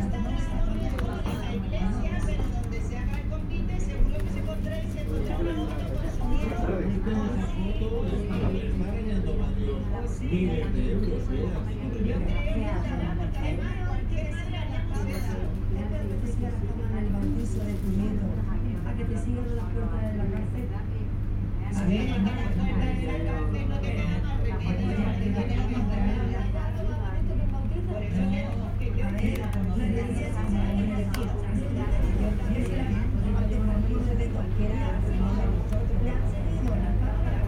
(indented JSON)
{
  "title": "Murcia, Murcia, Spain - bar of the train station",
  "date": "2016-03-09 12:00:00",
  "description": "A train station cafe with people talking and a tv set.",
  "latitude": "37.97",
  "longitude": "-1.13",
  "altitude": "47",
  "timezone": "Europe/Madrid"
}